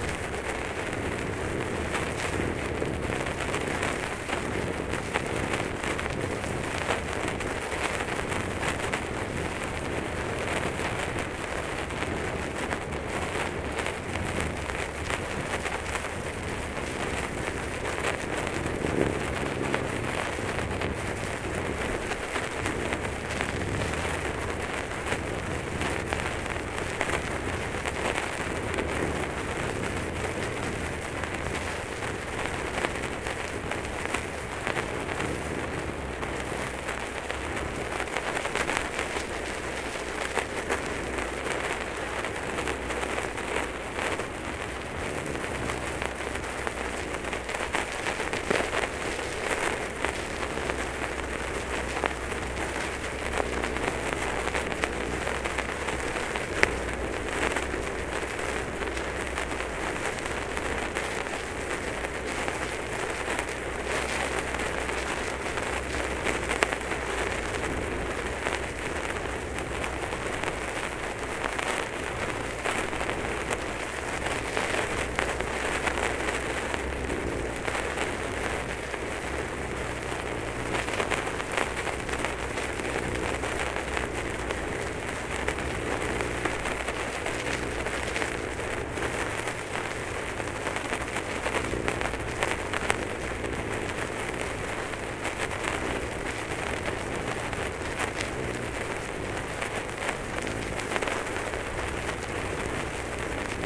Chaumont-Gistoux, Belgique - Strange resurgence
In this pond, where water is very calm and clear, there's an underwater resurgence. It provokes a small mud geyser. I put a microphone inside. It's simply astonishing ! No, it's not an old gramophone record, it's not the sound of my feet when I'm driving by bike like a crazy, it's not a bowel movement... It's an unmodified sound underwater of a strange resurgence inside the mud. Why is it like that ? Perhaps an pressure coming from the nearby river ? Unfortunately no explanation.
15 August 2016, 15:20